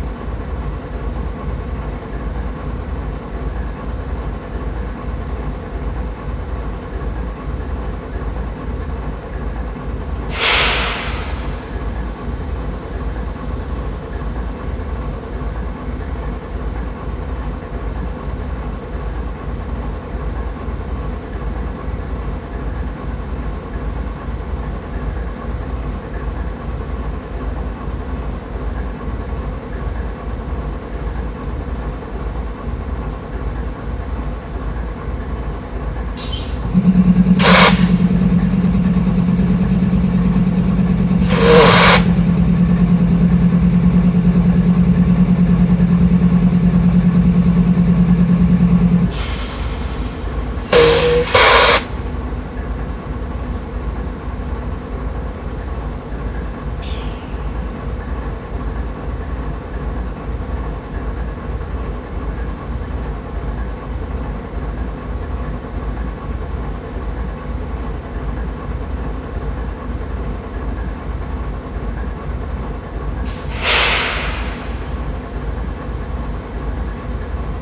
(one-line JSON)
{"title": "Freight Engine, Memorial Park, Houston, TX - Freight Engine", "date": "2013-03-23 03:20:00", "description": "Idling iron beast, doing what it does.\nCA14 omnis (spaced)> Sony PCM D50", "latitude": "29.77", "longitude": "-95.45", "altitude": "23", "timezone": "America/Chicago"}